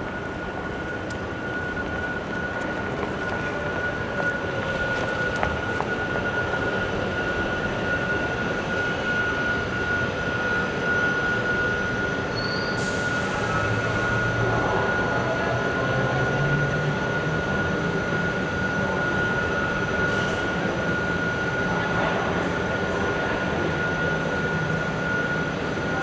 Bus Tunnel - Bus Tunnel #1
Running underneath downtown Seattle is a commuter bus tunnel, allowing Metro to bypass downtown traffic. The 1.3 mile $455 million tunnel is finished entirely in expensive Italian marble, thanks to a cozy arrangement between the contractors and city managers. It presents a reverberant sound portrait of mass transit at work.
Major elements:
* Electric busses coming and going (some switching to diesel on the way out)
* Commuters transferring on and off and between busses
* Elevator (with bell) to street level
* Loose manhole cover that everybody seems to step on